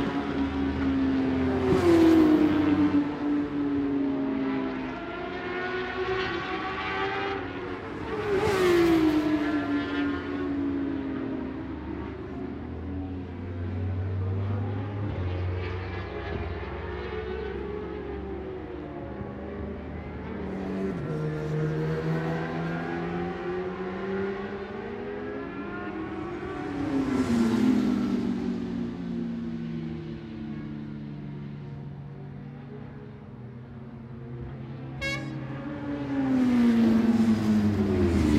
Scratchers Ln, West Kingsdown, Longfield, UK - BSB 2005 ... Superbikes ... FP2 ...
BSB ... Superbikes ... FP2 ... one point stereo mic to minidisk ...
26 March, 15:00